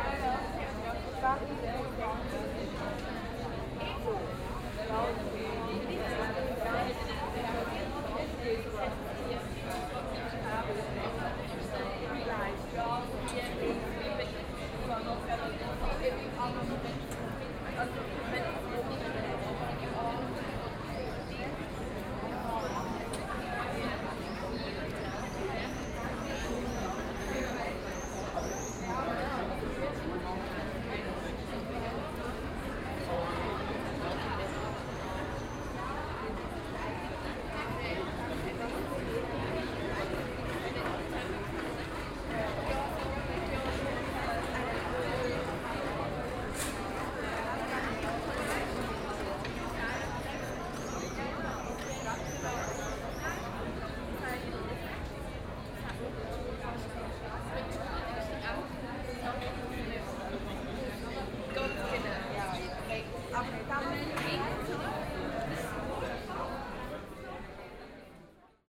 Aarau, Tuchlaube, Evening - Tuchlaube
In front of the Theater of Aarau called Tuchlaube, people chatting in the café.